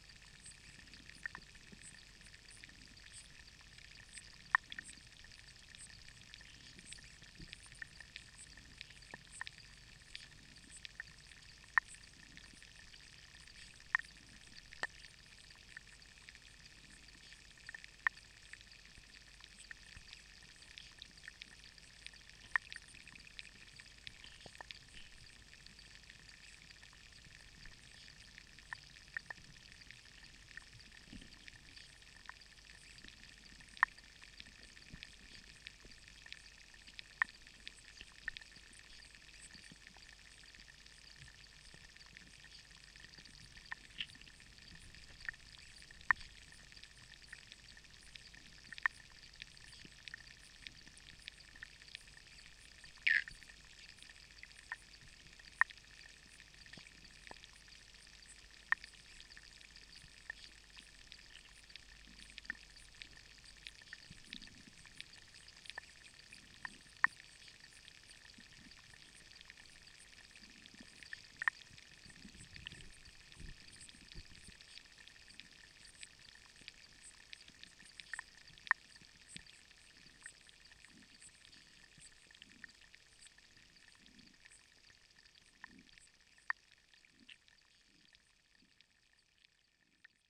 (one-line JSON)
{"title": "Aknysteles, Lithuania, underwater activity", "date": "2017-06-28 14:40:00", "description": "underwater activity in a lake. hydrophones. and it's heard how auto is passing on the near road", "latitude": "55.52", "longitude": "25.42", "altitude": "169", "timezone": "Europe/Vilnius"}